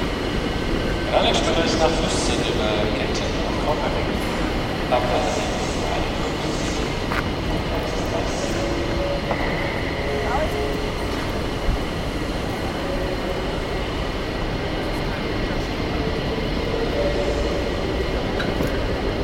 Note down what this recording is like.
recorded june 6, 2008.- project: "hasenbrot - a private sound diary"